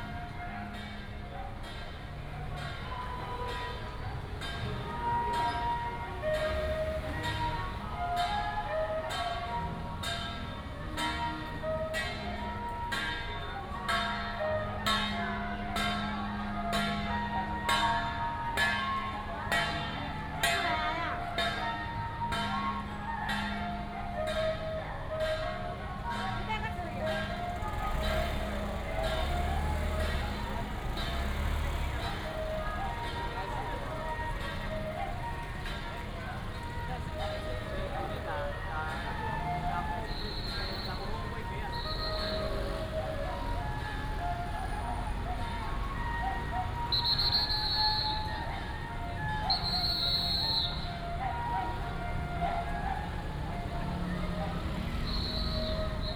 Dexing Rd., Huwei Township - Mazu Pilgrimage activity
Firecrackers and fireworks, Many people gathered at the intersection, Baishatun Matsu Pilgrimage Procession, Mazu Pilgrimage activity